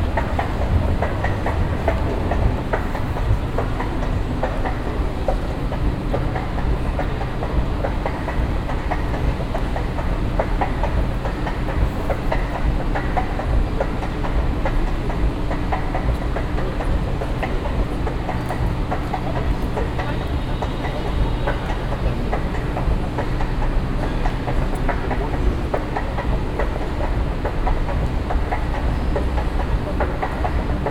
essen, willy brandt platz, moving staircase
A pair of moving staircases leading to the basement entry of a store.
Projekt - Klangpromenade Essen - topographic field recordings and social ambiences